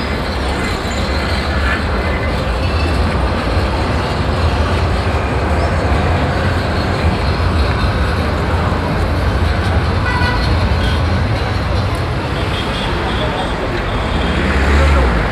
Ville Nouvelle, Tunis, Tunesien - tunis, place de l'independance
Standing at the corner of Avenue e France and Rue Jamel Abdenasser in the morning time. The sound of car traffic and trams passing by plus the whistles of a policeman and some passengers talking.
international city scapes - social ambiences and topographic field recordings
2 May, 09:00